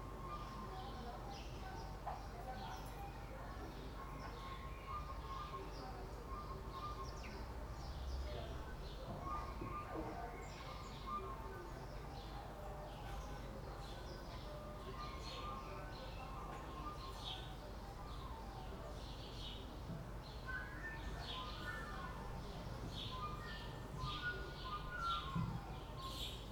Berlin Bürknerstr., backyard window - flute excercises
someone excercises flute. warm spring evening.
Berlin, Germany, 7 June 2010, 19:30